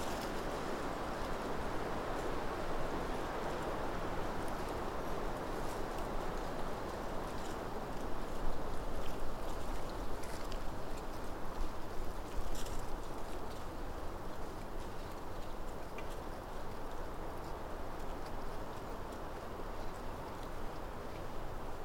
Late evening recording at Birchen Copse on the edge of Woodcote. The recording is underpinned by the movement of the woodland canopy in the wind, the quiet rumbling drone of traffic on the A4074, trains on the Reading to Oxford mainline and planes high overhead. Piercing this are the rustles of small animals nearby, the creaking of trees in the breeze and an owl further into the woodland. Recorded using a spaced pair of Sennheiser 8020s at head height on an SD788T.